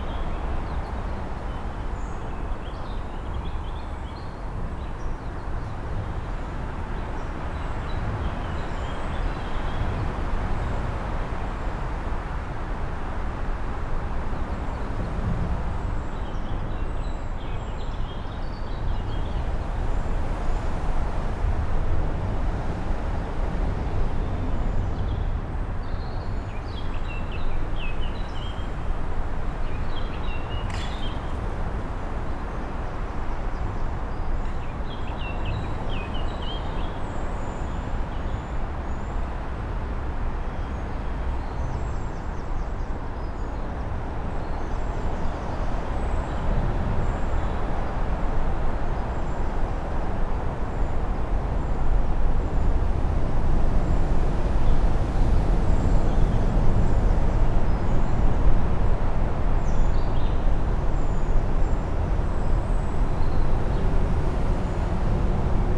Norwich Southern Bypass, Norwich, UK - Underneath A47 Roadbridge (nearer centre)
Recorded with a Zoom H1n with 2 Clippy EM272 mics arranged in spaced AB.
4 June 2021, 12:11